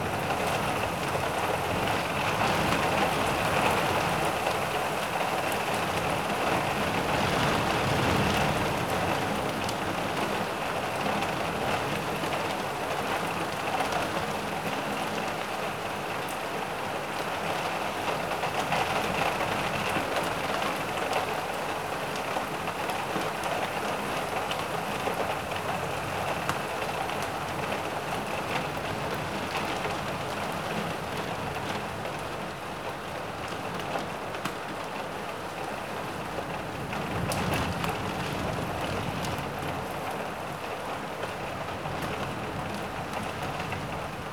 Poznan, Mateckiego Street - cloudburst
cloudburst on Saturday early afternoon. quite a commotion outside of the window. rain tumbling with wind. heavy drops banging on the window sill.